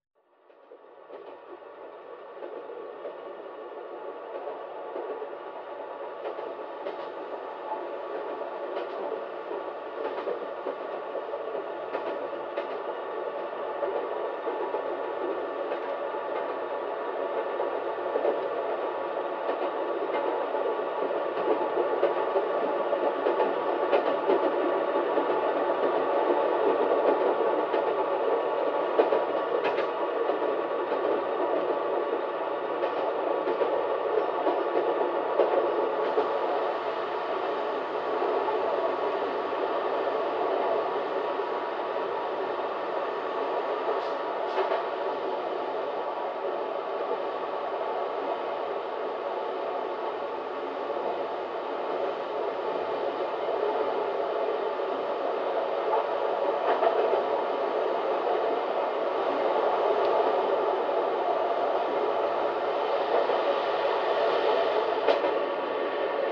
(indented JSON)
{
  "title": "Chianche, Avellino, Italy - train ride-contact mic",
  "date": "2012-07-20 16:19:00",
  "description": "The recording was made on the train between Benevento and Avelino, a rail line that was shut down in October 2012.\nThis recording was made with contact mics.",
  "latitude": "41.03",
  "longitude": "14.78",
  "timezone": "Europe/Rome"
}